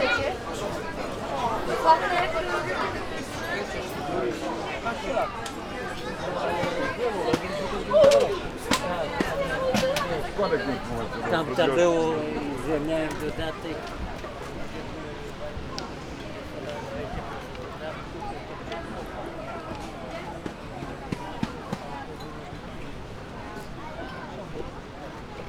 Lubiatowo, restaurants near beach entrance - fish restaurants
visiting the decks of three fish restaurants in Lubiatowo. lots of people having their meals, ordering, pondering what to eat.
Poland, 16 August 2015, 16:39